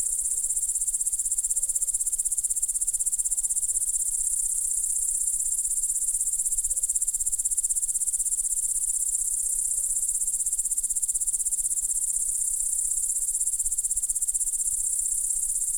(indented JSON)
{
  "title": "Šlavantai, Lithuania - Grasshoppers",
  "date": "2020-07-29 20:00:00",
  "description": "Grasshoppers chirping away in the evening. Recorded with ZOOM H5.",
  "latitude": "54.16",
  "longitude": "23.66",
  "altitude": "141",
  "timezone": "Europe/Vilnius"
}